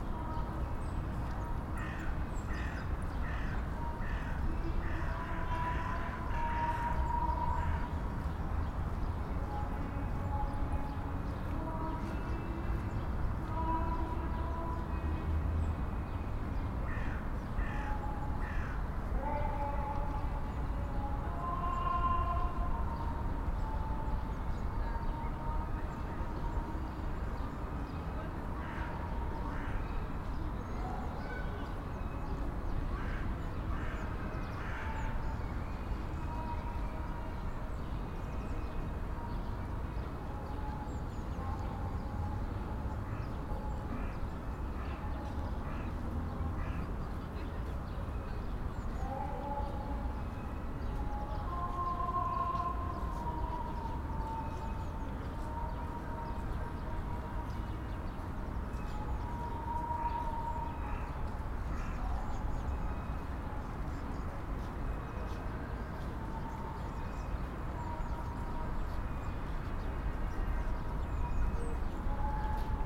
Mihaylovsky garden, Saint-Petersburg, Russia - Mihaylovsky garden. Church service near Savior on Blood
SPb Sound Map project
Recording from SPb Sound Museum collection
Sankt-Peterburg, Russia